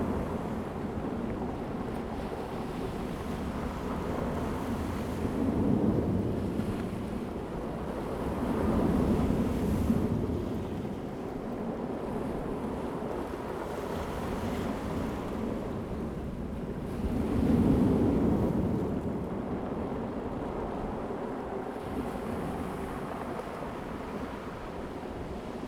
Sound of the waves, In the circular stone shore, The weather is very hot
Zoom H2n MS +XY